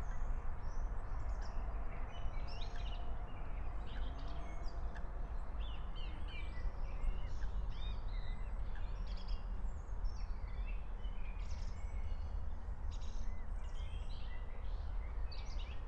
04:30 Berlin, Alt-Friedrichsfelde, Dreiecksee - train junction, pond ambience